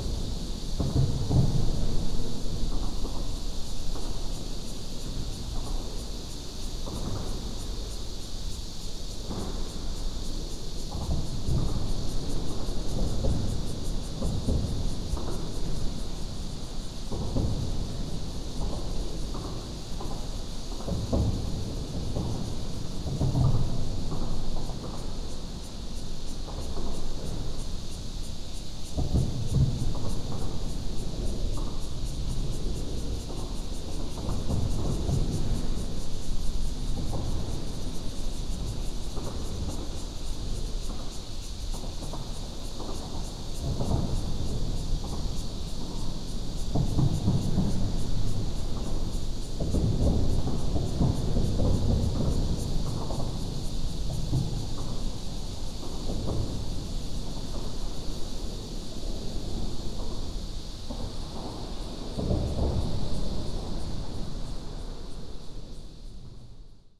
Ln., Sec., Minquan Rd., Zhongli Dist. - Under the highway
Under the highway, Cicada cry, Traffic sound
Taoyuan City, Taiwan, 28 July 2017